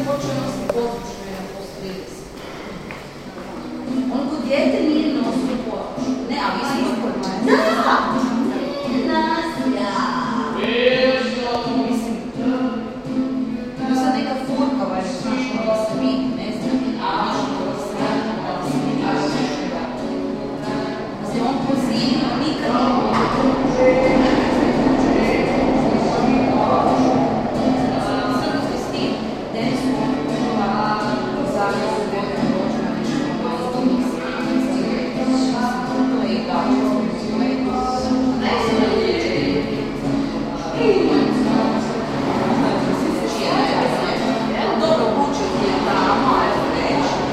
standing inside old passage, two women talking, young guy playing and singing..
9 June 2010, 18:29